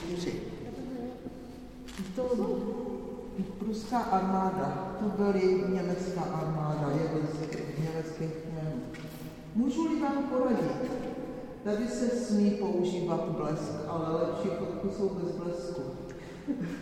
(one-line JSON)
{"title": "Gorlice, Vysehrad fortification underground system, Prague, Czech Republic - Inside the Gorlice", "date": "2012-04-06 14:16:00", "description": "Excursion to the underground defense system of Vysehrad fortification. The Gorlice underground hall served in 18.century as a gathering place for troops, ammunition and food store. In recent history served as well as a bomb shelter and place to store vegetable - probably potatoes.", "latitude": "50.07", "longitude": "14.42", "altitude": "223", "timezone": "Europe/Prague"}